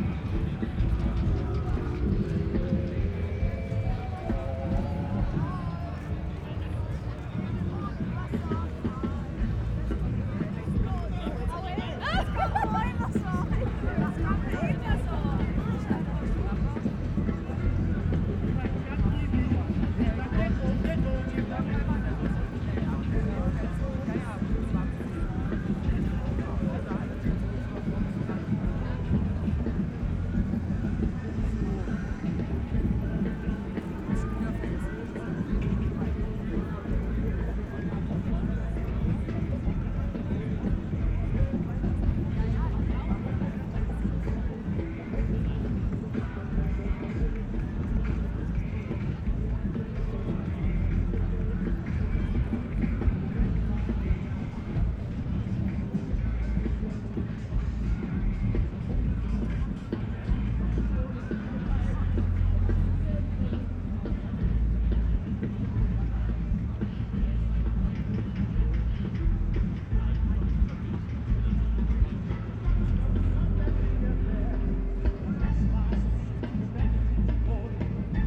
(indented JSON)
{
  "title": "Bundestag, Berlin, Deutschland - sound of demonstration",
  "date": "2018-12-01 15:50:00",
  "description": "Bundesplatz, near Bundestag, distand sounds of a demonstration about climate change and aginst brown coal, fossile fuel etc.\n(Sony PCM D50, Primo EM172)",
  "latitude": "52.52",
  "longitude": "13.37",
  "altitude": "35",
  "timezone": "GMT+1"
}